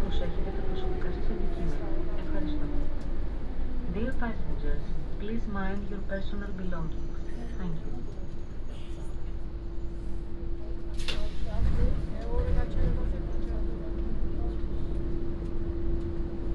Monastiraki Station Athens, Greece - (535) Metro ride from Monastiraki to Ethniki Amyna
Binaural recording of a metro ride with line M3 from Monastiraki to Ethniki Amyna. It is pretty long with very regular periods between the stations.
Recorded with Soundmann OKM + Sony D100
10 March 2019, 16:35